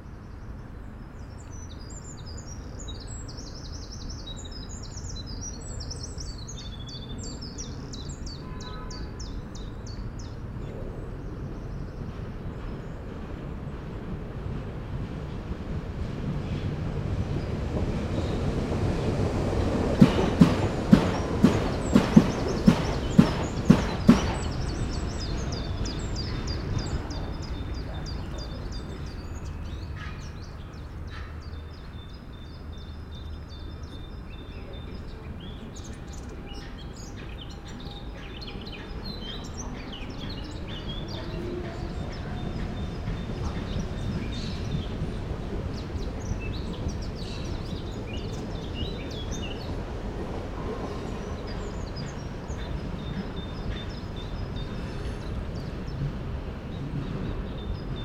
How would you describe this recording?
Sunny ambiance into the park, and a fast pace of trains passing in the station of Østerport.